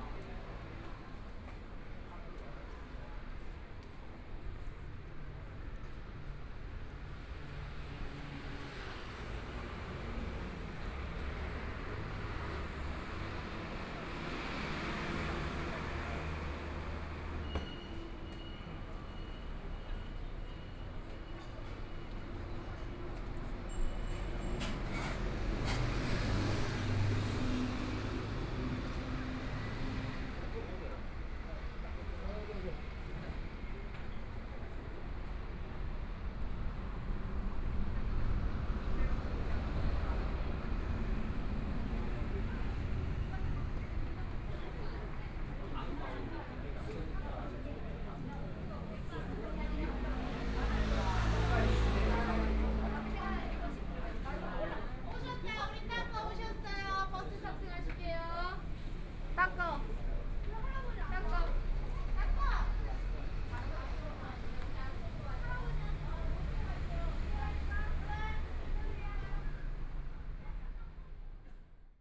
Walking on the road, from Dehui St. to Nong'an St., A variety of restaurants and shops, Pedestrian, Traffic Sound, Motorcycle sound
Binaural recordings, ( Proposal to turn up the volume )
Zoom H4n+ Soundman OKM II
Taipei City, Taiwan, 15 February 2014, 17:46